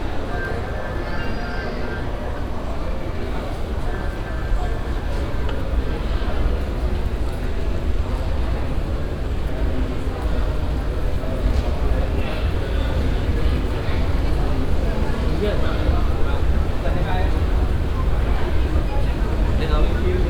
dubai, airport, transportation belt
gliding on a people transportation belt at dubai airport inside a reflective hall among hundres of travellers coming from all kind of countries
international soundmap - social ambiences and topographic field recordings